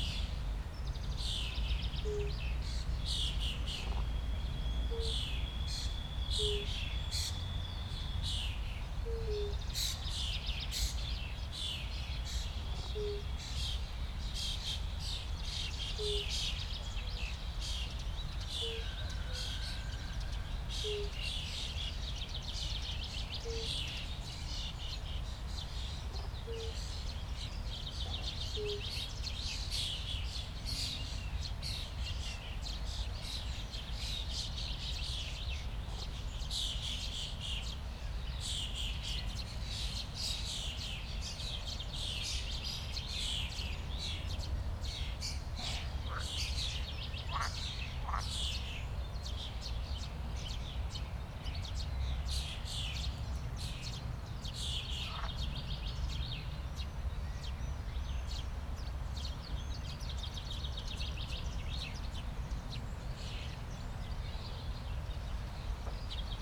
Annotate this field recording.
it's remarkable colder today, and also the frequency of the toad's call is lower and they call less frequent. But frogs seem to be more active, (Sony PCM D50, DPA4060)